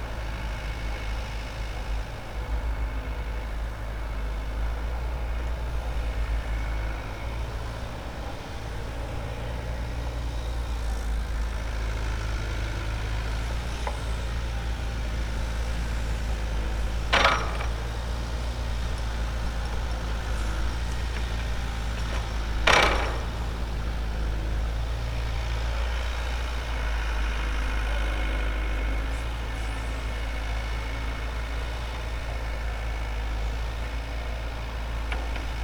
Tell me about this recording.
working excavator in the distance as cars pass by